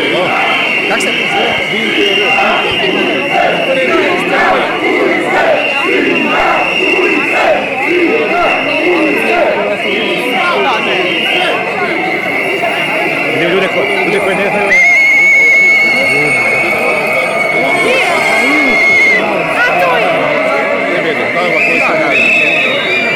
up to 10.000 protesters demanding elections and shouting: everybody to the streets! let's go to the HDZ headquarters! (HDZ is the rulling conservative party)